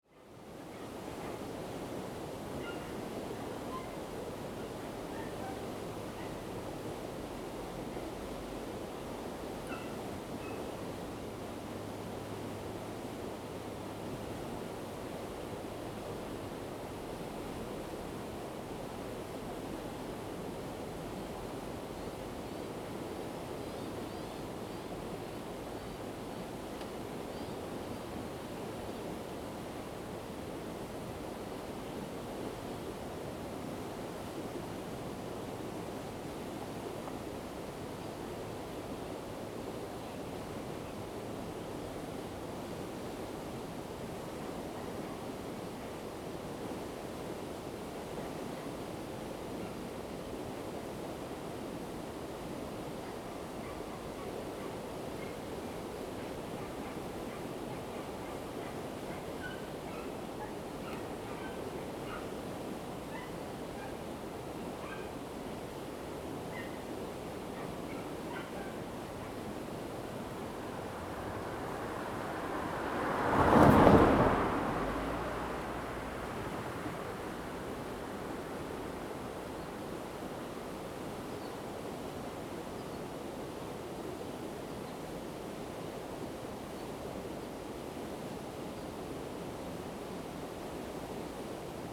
福興村, Ji'an Township - Next to farmland
Next to farmland, Dogs barking, The sound of water streams, The weather is very hot
Zoom H2n MS+ XY